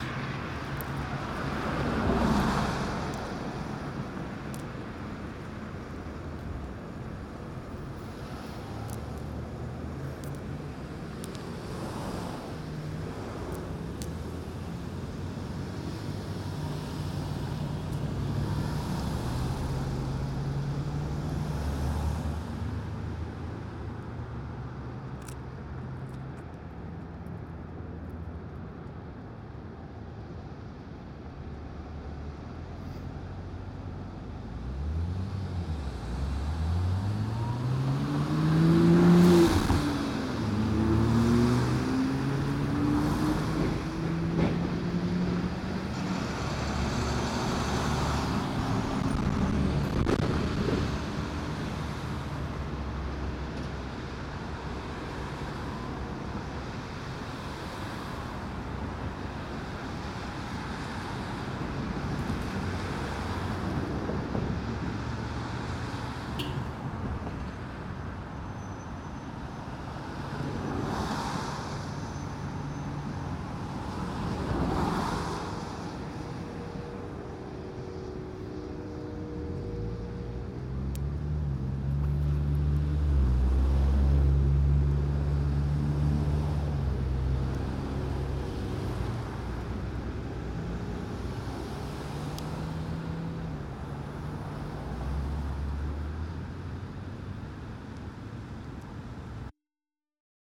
Rose Garden, Allentown, PA, USA - Tilghman & Ott
I recorded this on the corner of Tilghman and Ott with a Sony. There is heavy traffic in this area especially this early on a weekday.